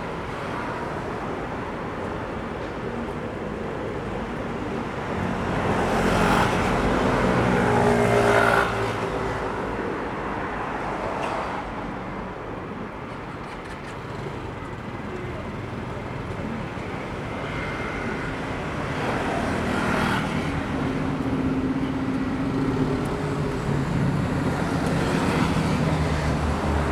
Sanmin District - The streets at night
The streets at night, Sony ECM-MS907, Sony Hi-MD MZ-RH1
29 March 2012, ~23:00